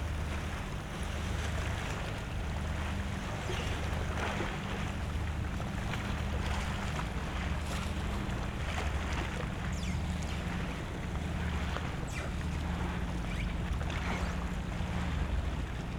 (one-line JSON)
{"title": "Molo, Punto Franco Vecchio, Trieste - gear squeeking, ship drone", "date": "2013-09-07 20:05:00", "description": "ambience at Molo 4, Punto Franco Vecchio. light waves, a squeeking ship at the landing stage, drone of a boat leaving the harbour.\n(SD702, AT BP4025)", "latitude": "45.65", "longitude": "13.77", "altitude": "29", "timezone": "Europe/Rome"}